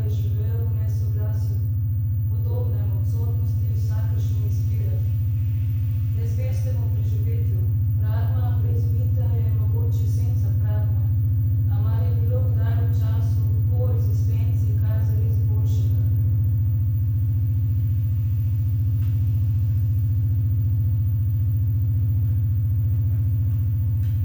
Centralna Postaja, Koroška cesta, Maribor - sonic fragment from performance Bič božji
Maribor, Slovenia, June 2014